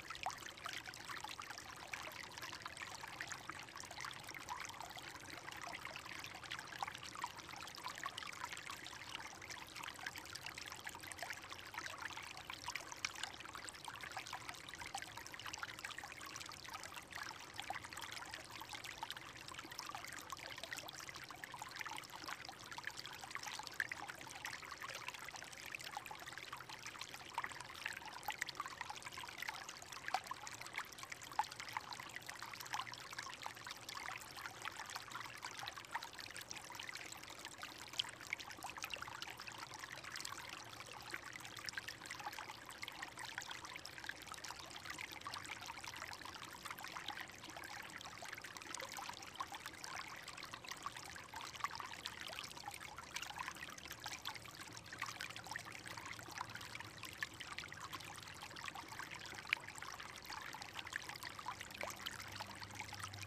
Mountain blvd. creek, Oakland - Mountain blvd. creek, Oakland
gently running creek from remains of old sulfur mines